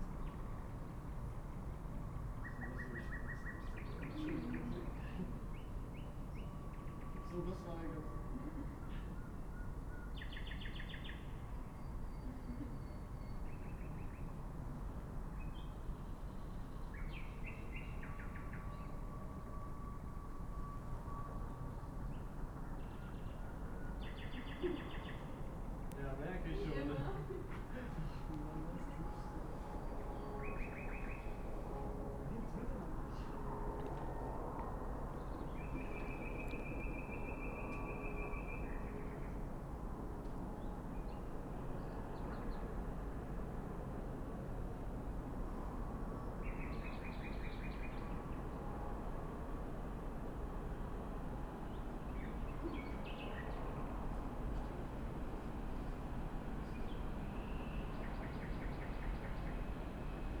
{"title": "S-Bahn Station, Berlin-Buch - station ambience, nightingale, freight train", "date": "2019-05-07 00:05:00", "description": "S-Bahn station ambience at midnight, a nightingale sings in the nearby little wood, a freight train rushes through (loud), suburb trains arrive and depart, people talking...\n(Sony PCM D50, DPA4060)", "latitude": "52.64", "longitude": "13.49", "altitude": "58", "timezone": "GMT+1"}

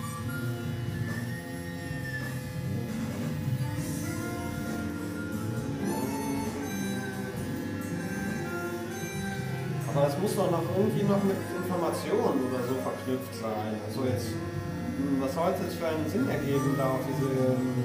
{"title": "at the hairdressers, cologne", "date": "2009-04-29 19:53:00", "description": "talking about radio aporee at the hairdressers.\nrecorded nov 11th, 2008.", "latitude": "50.92", "longitude": "6.96", "altitude": "57", "timezone": "GMT+1"}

